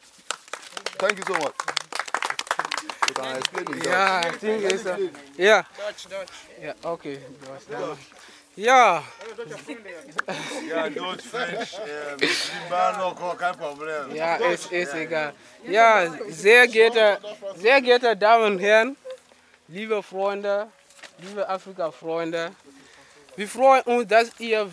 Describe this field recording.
A sunny Allotment-garden near the tiny River Aase in Hamm/ Westfalen. Many African people, their friends and families are gathering, eating, drinking, dancing, and perhaps meeting each other for the first time. It’s the “know me, I know you” party of the newly-formed Yes Africa Verein. The founders and board members of the organization Nelli Foumba Saomaoro and Yemi Ojo introduce themselves and the organization and welcome everybody to get involved. While the party is getting into full swing, Nelli makes a couple of interviews with members and guests. Two samples are presented here.